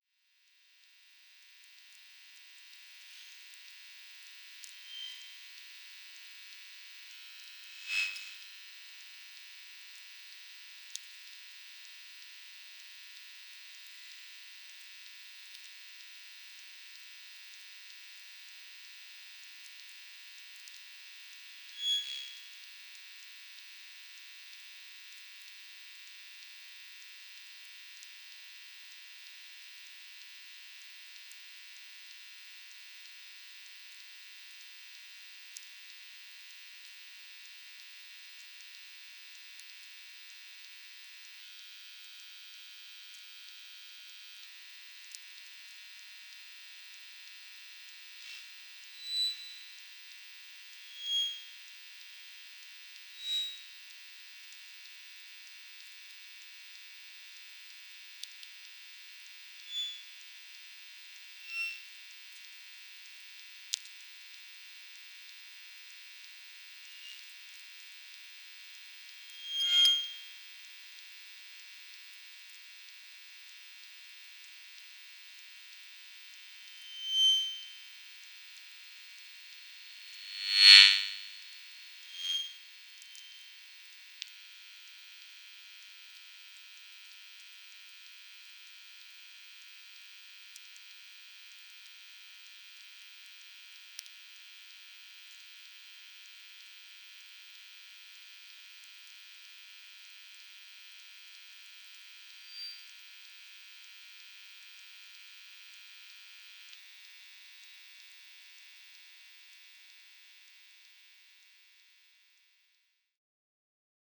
Atkočiškės, Lithuania, electromagnetic cars
electromagnetic listening device Priezor at the road. listening to the cars passing by. I have used eq on this recording slightly removing hum from near power line
3 May